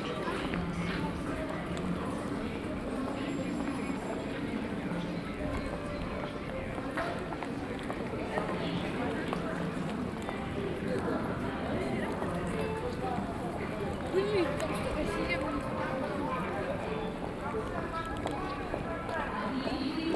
Ulitsa Uritskogo, Irkutsk, Irkutskaya oblast', Russia - Binaural soundwalk: Shopping street
From one end of the pedestrian street to the other. Interference of attention grabbing sounds. Megaphones, loudspeakers footsteps, voices. Recorded with Tascam DR-07 plus Soundman OKM Klassik II.
23 October 2015